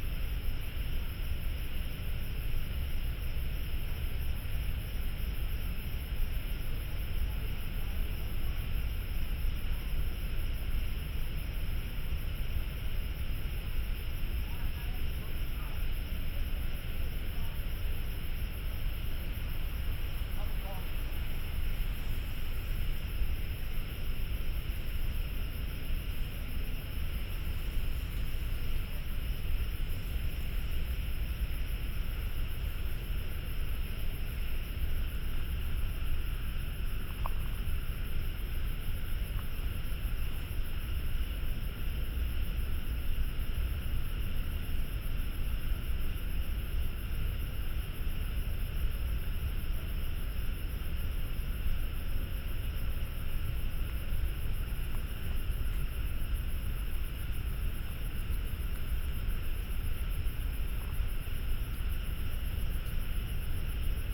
北投區關渡里, Taipei City - Environmental sounds
Traffic Sound, Environmental sounds, Birdsong, Frogs
Binaural recordings
Beitou District, 關渡防潮堤, 17 March 2014